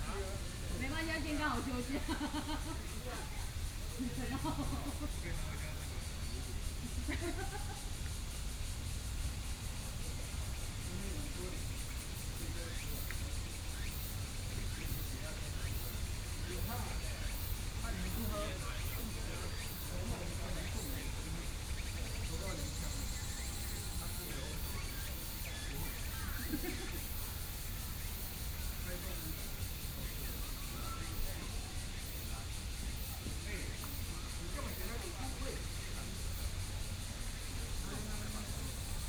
Taoyuan - Grill
Morning, a group of people are barbecue in the park, Sony PCM D50 + Soundman OKM II